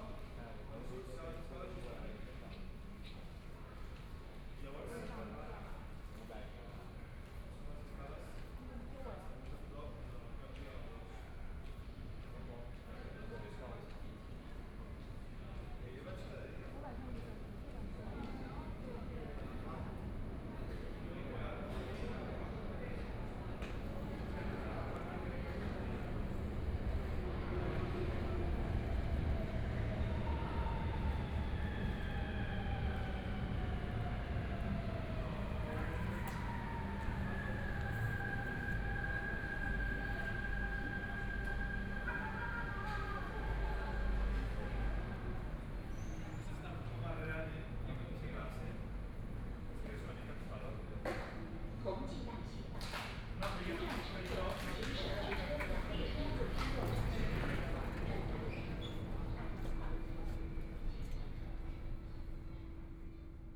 Yangpu District, Shanghai - soundwalk
From the building to the subway station, Went underground platforms, Binaural recording, Zoom H6+ Soundman OKM II
Shanghai, China